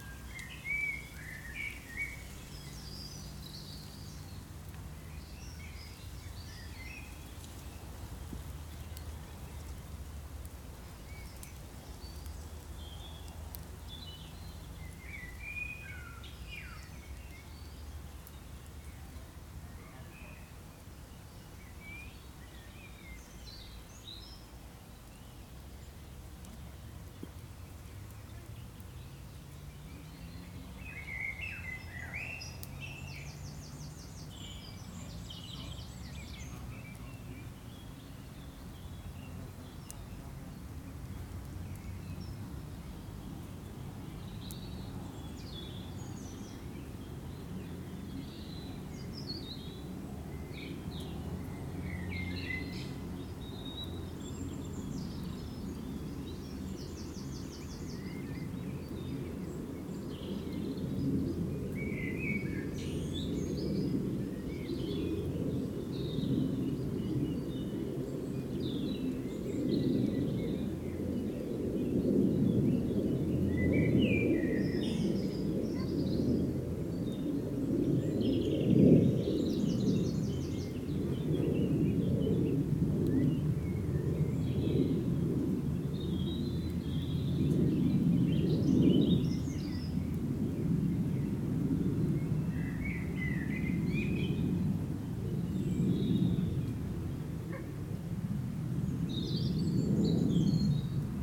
Ditchling Common, Hassocks, UK - Lazing in a park on a summers day
Recorded while eating a picnic. People, dogs, insects and planes pass by.
South East England, England, United Kingdom, 31 May, 12:55